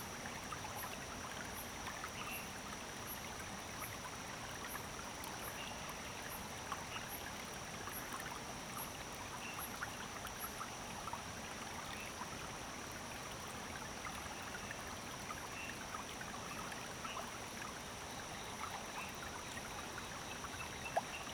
種瓜路50號, 埔里鎮桃米里 - Frogs and water sound
Frogs and water sound
Zoom H2n MS+ XY
Puli Township, Nantou County, Taiwan, 14 July 2016